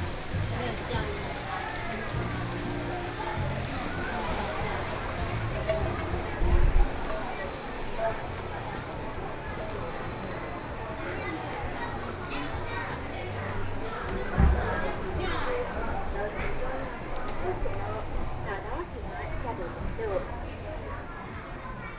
甲子蘭酒文物館, Yilan City - Tourism Winery
Walking through the Tourism Winery, Very hot weather, Many tourists
Sony PCM D50+ Soundman OKM II
Yilan City, Yilan County, Taiwan